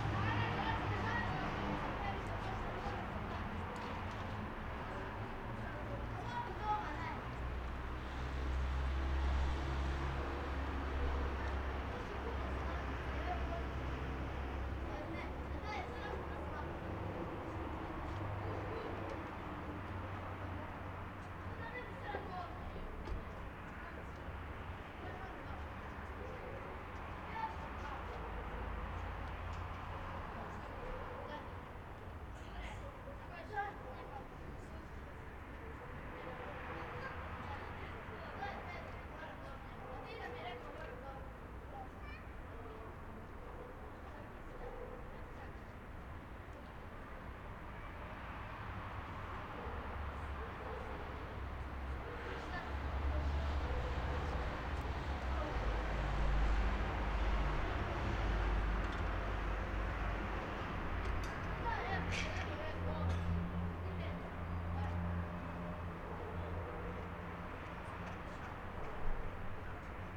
Field recording, soundscape
rec. setup: M/S matrix-AKG mics (in Zeppelin mounted on Manfrotto tripod)>Sound Devices mixer. 88200KHz